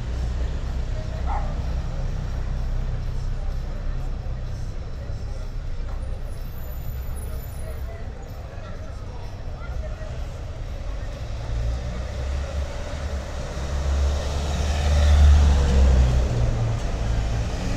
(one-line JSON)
{"title": "Vilnius, Lithuania, at Uzupis Angel sculpture", "date": "2019-10-18 18:20:00", "description": "A sculpture of an angel was placed in the central square of Užupis (\"art\" part of Vilnius). The bronze angel, has become the symbol of Užupis. Just standing at the sulpture and listening...", "latitude": "54.68", "longitude": "25.30", "altitude": "102", "timezone": "Europe/Vilnius"}